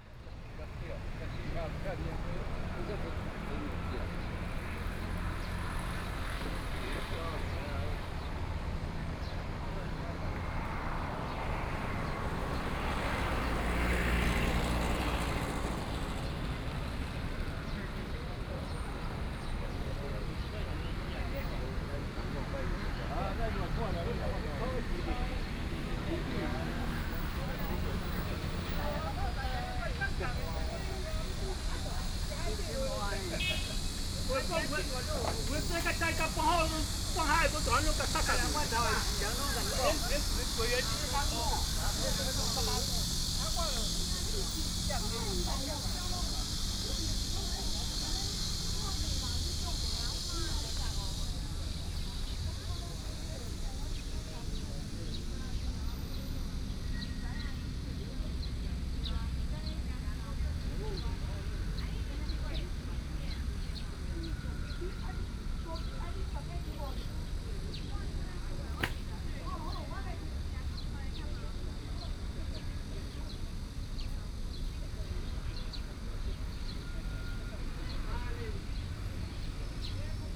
Zhongshan Park, Yilan City - In the Park
In the Park, Cicadas, Traffic Sound, Hot weather
Sony PCM D50+ Soundman OKM II